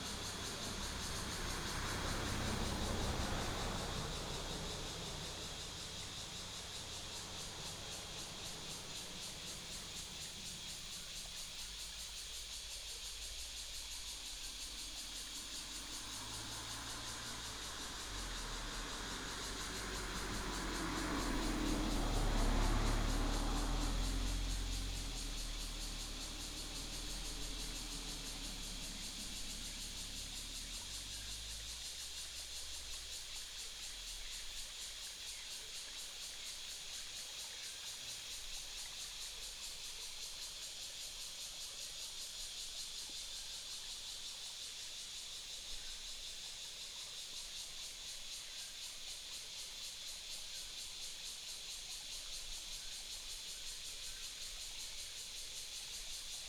126縣道, Touwu Township - stream and Cicadas
stream, Cicadas call, Birds sound, Binaural recordings, Sony PCM D100+ Soundman OKM II
Miaoli County, Touwu Township, 126縣道, 15 September 2017